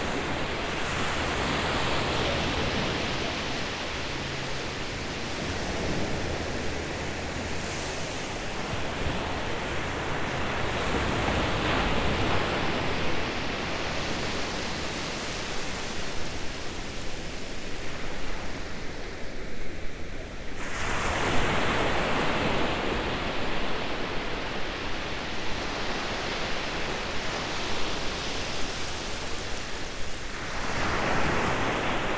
Hunting Island, SC, USA - Hunting Island South Beach
Gentle ocean surf on Hunting Island State Park's south beach. The wind and currents were calmer than usual on this day. People can be heard walking past the rig, and sounds from behind the recorder can be heard.
[Tascam Dr-100mkiii & Primo EM-272 omni mics]
South Carolina, United States